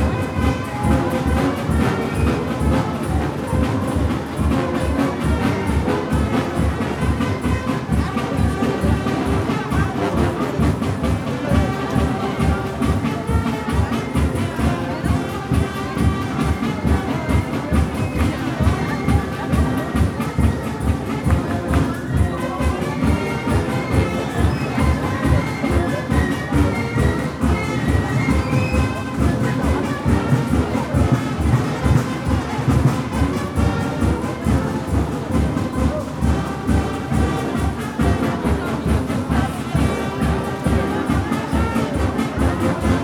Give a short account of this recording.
Célébration du Saint Marcel patron protecteur de la ville, Celebration of Saint Marcel patron saint of the city